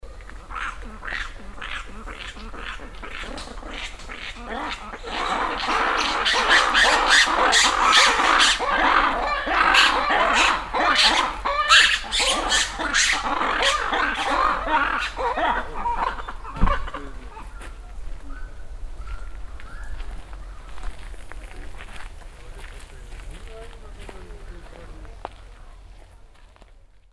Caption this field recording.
inside the safari park area - a scream of an lemure then some footsteps on the stoney walkway, international sound scapes - topographic field recordings and social ambiences